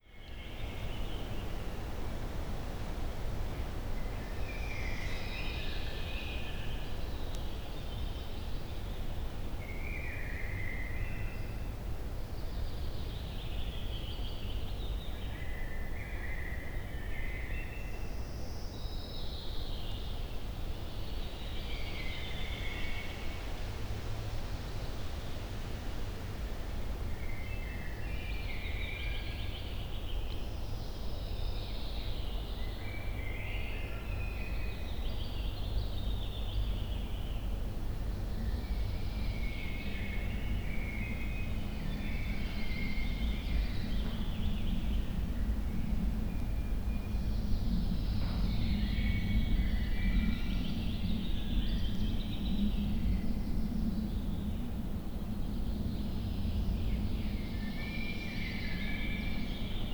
Vogelsang, ex Soviet base, Germany - derelict sports hall, outside ambience inside
wind, birds, aircraft crossing, heard inside sportshall through open windows
(SD702, MKH8020)